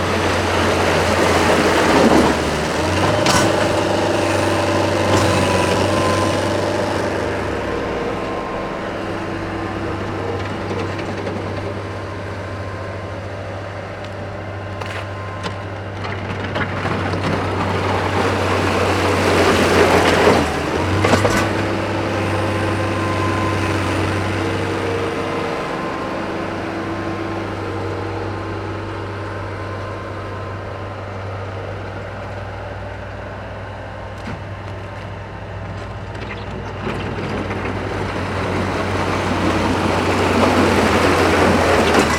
Saint James Lake draining with machines